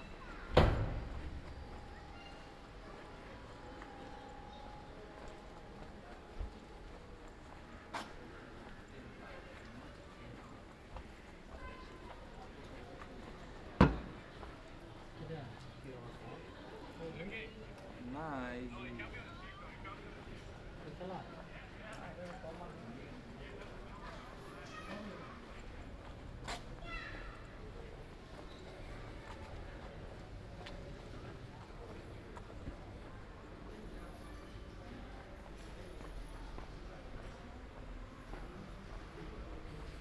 Ascolto il tuo cuore, città. I listen to your heart, city. Several Chapters **SCROLL DOWN FOR ALL RECORDINGS - La flânerie aux temps de la phase IIB du COVID19 Soundwalk
"La flânerie aux temps de la phase IIB du COVID19" Soundwalk
Chapter LXXXIII of Ascolto il tuo cuore, città. I listen to your heart, city
Thursday May 21 2020. Walking in the movida district of San Salvario, Turin four nights after the partial reopening of public premises due to the COVID19 epidemic. Seventy two days after (but day seventeen of Phase II and day four of Phase IIB) of emergency disposition due to the epidemic of COVID19.
Start at 9:48 p.m. end at 10:28 p.m. duration of recording 39’58”
The entire path is associated with a synchronized GPS track recorded in the (kml, gpx, kmz) files downloadable here:
Torino, Piemonte, Italia